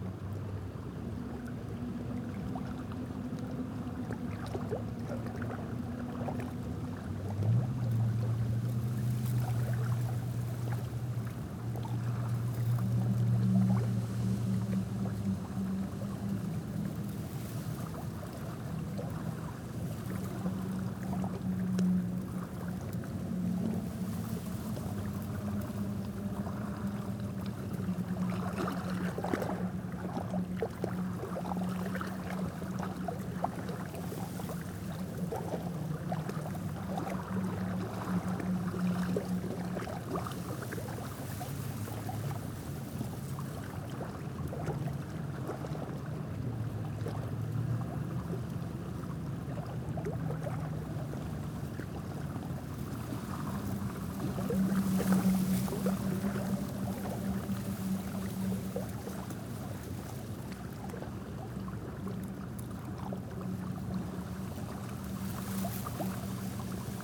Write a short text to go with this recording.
The Great Cataraqui River, with Kingston's 'singing bridge' in the distance, and rustling grasses. The 'Tannery Lands' are a derelict and poisoned area where there was formerly industry that used nasty chemicals and heavy metals. The ground here is heavily contaminated but it is also an area that nature is reclaiming, and you can easily see osprey, herons, otters, beaver, and many turtles.